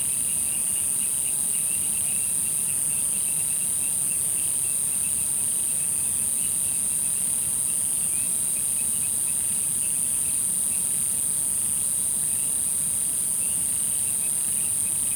茅埔坑, 埔里鎮桃米里 - Small village night
Frogs chirping, Insects sounds, Small village night
Zoom H2n MS+ XY
Puli Township, 桃米巷11-3號, 10 August 2015